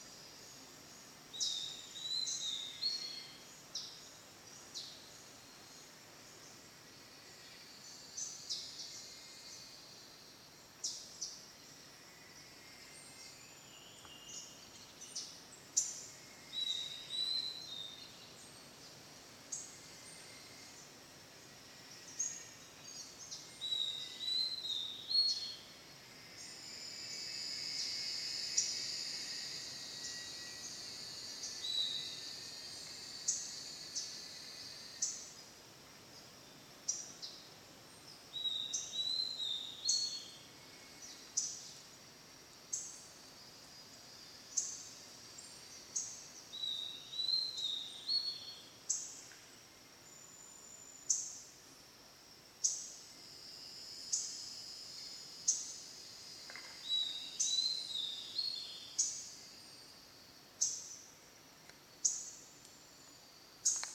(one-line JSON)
{"title": "bird's soundscape and wind noise in trees, São Sebastião da Grama - SP, Brasil - bird's soundscape and wind noise in trees", "date": "2022-04-09 10:32:00", "description": "This soundscape archive is supported by Projeto Café Gato-Mourisco – an eco-activism project host by Associação Embaúba and sponsors by our coffee brand that’s goals offer free biodiversity audiovisual content.", "latitude": "-21.74", "longitude": "-46.69", "altitude": "1239", "timezone": "America/Sao_Paulo"}